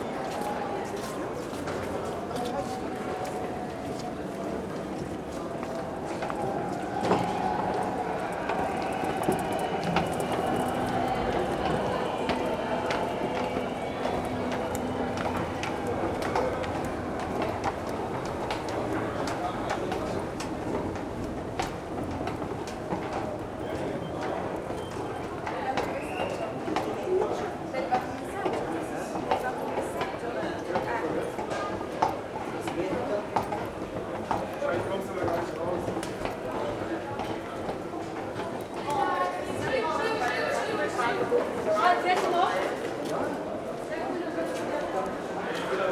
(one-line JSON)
{"title": "berlin, skalitzer straße: 1st may soundwalk (5) - the city, the country & me: 1st may soundwalk (5)", "date": "2011-05-01 23:45:00", "description": "1st may soundwalk with udo noll\nthe city, the country & me: may 1, 2011", "latitude": "52.50", "longitude": "13.42", "altitude": "38", "timezone": "Europe/Berlin"}